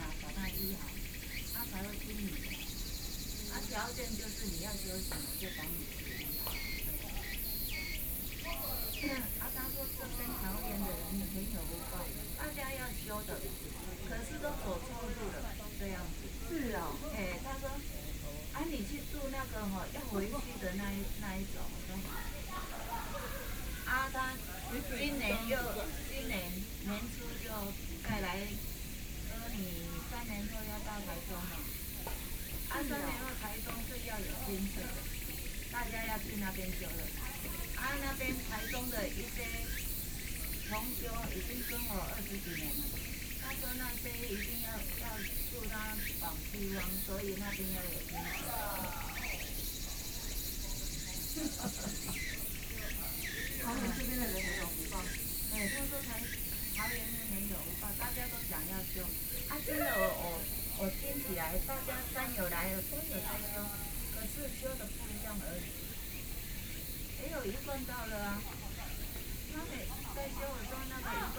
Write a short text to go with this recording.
Two women are sharing religion, There are people playing badminton nearby, Birds, Sony PCM D50 + Soundman OKM II